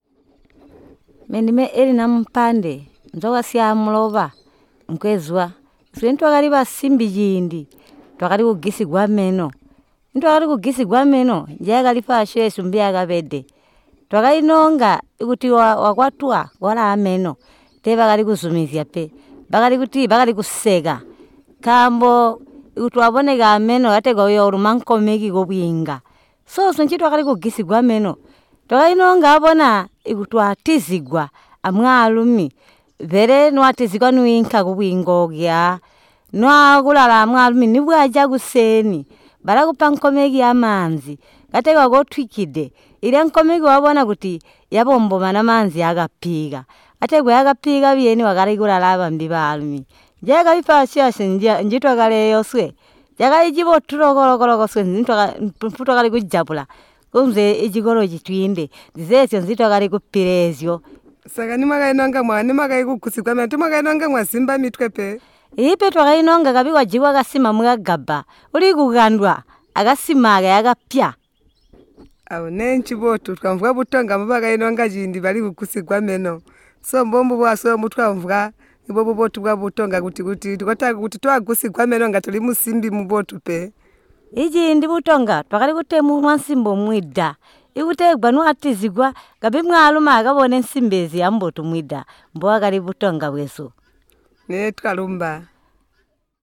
Simatelele, Binga, Zimbabwe - Banene, tell me about the traditional removal of the front teeth...
A community elder at Simatelele Ward, Elina Mumpande talks about a traditional custom among the Tonga people of removing the front teeth of girls. She explains the custom saying that it was done among the BaTonga when they lived at the river to recognize each other as belonging to the tripe.
a recording by Ottilia Tshuma, Zubo's CBF at Simatelele; from the radio project "Women documenting women stories" with Zubo Trust, a women’s organization in Binga Zimbabwe bringing women together for self-empowerment.
Regina Munkuli asked the same question to Samuel Mwiinde, historian at Chief Siansali's court.
Zambia, 15 July 2016